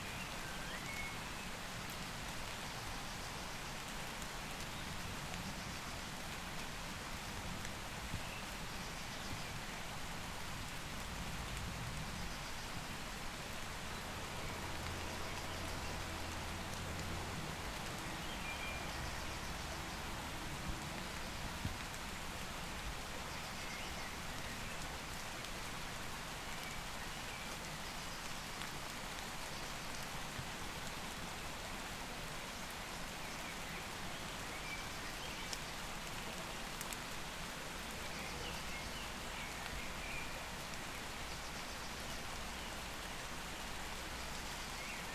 Schönblick, Forbach, Deutschland - Black Forest village at the creek
Morning atmosphere, medium rain, nearby small creek, distant traffic (handheld recorder, xy-stereo)
Forbach, Germany, 26 April 2019